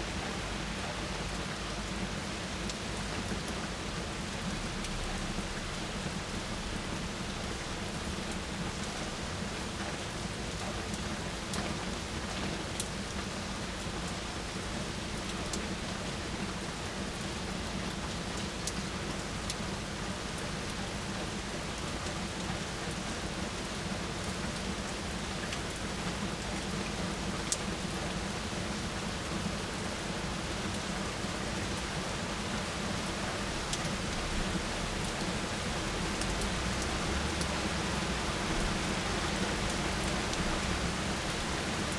Avenue Jean Jaures, Paris, France - pluie confinée du balcon
Labour day ORTF recording from balcony during confinement, rain and drops on the balcony
France métropolitaine, France